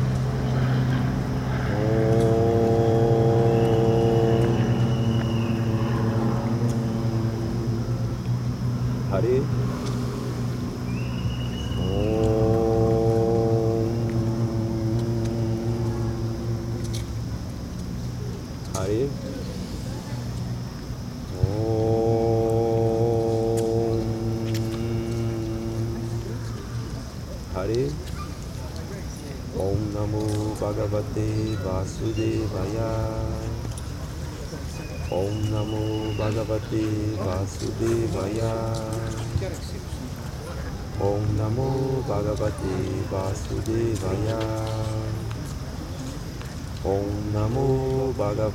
Aclimação, São Paulo - State of São Paulo, Brésil - Krishna Believers
In the park Aclimaçao in Sao Paulo, two people are meditating for Krishna.
People are walking around, the city is in background.
Recorded by a Binaural Setup with 2 x Sanken COS11D on a Handy Recorder Zoom H1
Sound Reference: 170213ZOOM0009
13 February, 18:00